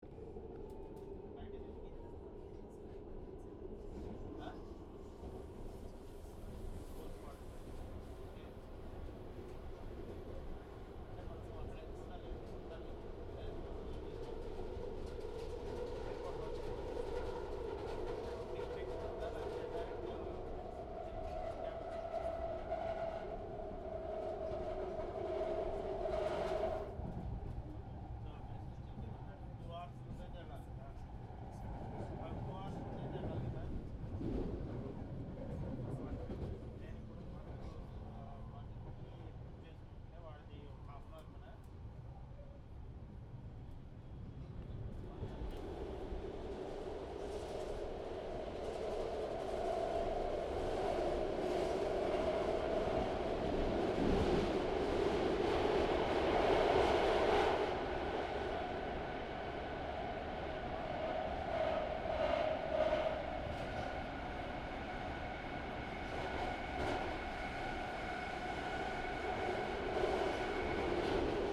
on BART headed to Ashby from the airport
Delirious from an early morning flight, heading to the Ashby stop from the San Francisco airport.
California, United States of America